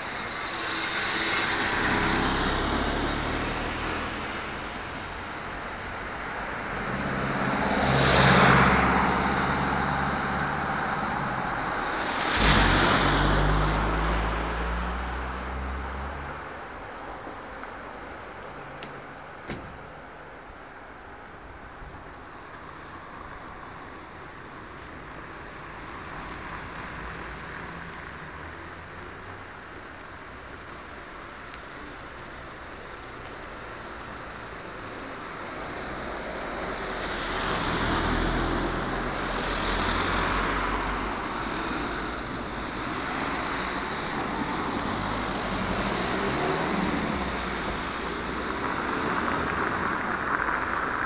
Reduty Ordona, Szczecin, Poland
Scene at the crossroad.